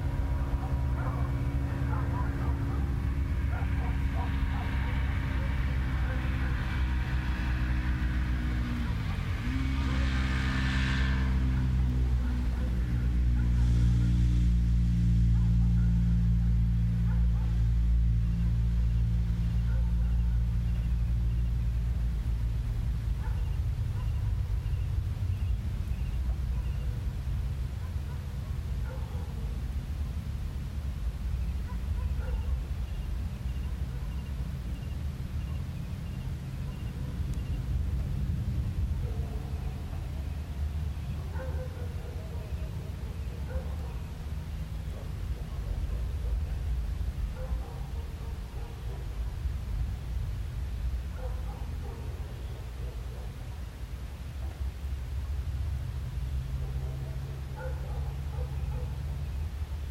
Chiquinquirá, Boyacá, Colombia - Medium environment
Rural land located in the department of Cundinamarca in cold climate, sucre occidental village, national road Chiquinquirá Bogotá.
Road and airway with heavy traffic due to its proximity to the capital of Colombia. Bordering territory between Boyacá and Cundinamarca, 1 kilometer from the national road, surrounded by cattle farms with domestic animals such as dogs, which are the guardians in rural areas.
Región Andina, Colombia, May 2021